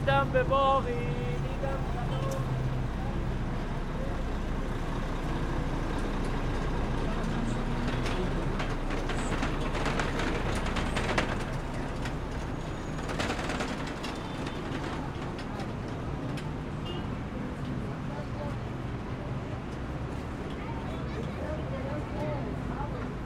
Tehran Province, Tehran, Enghelab, پایانه میدان انقلاب - میدان سپاه, Iran - Book sellers on the street